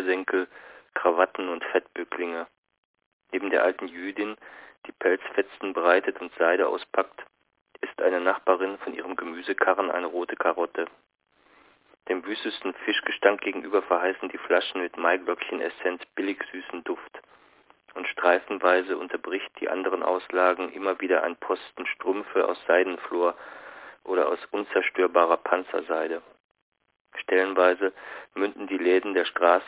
Der Landwehrkanal (8) - Der Landwehrkanal (1929) - Franz Hessel
Berlin, Germany